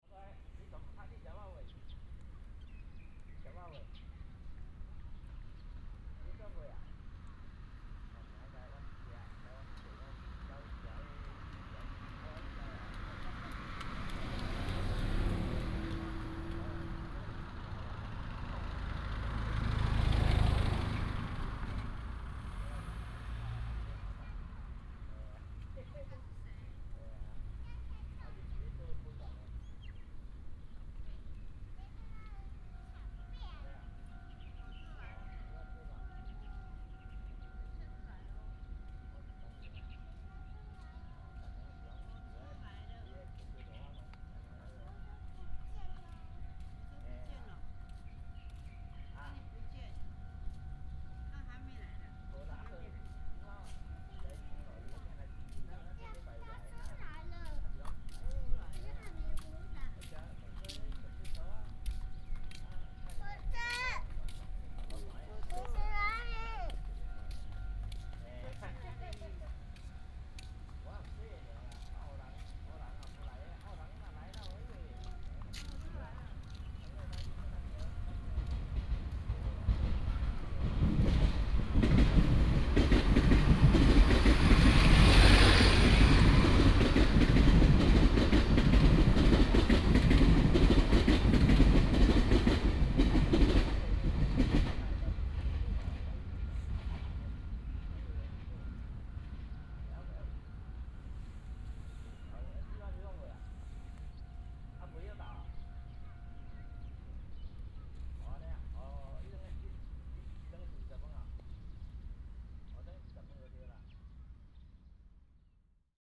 西平社區, 苗栗縣苑裡鎮 - The train runs through
Next to the temple, The train runs through, bird sound